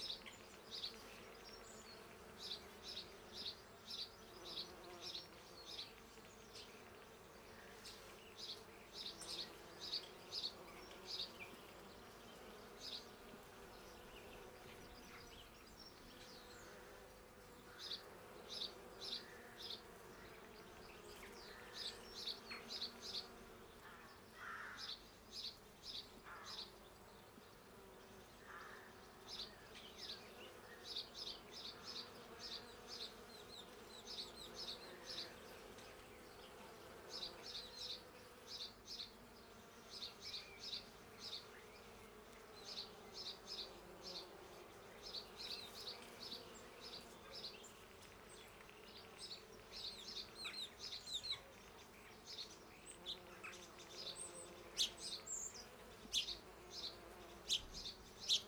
Recorder placed in garden tree in amongst bees. Birds in the background.
England, United Kingdom, 16 June 2022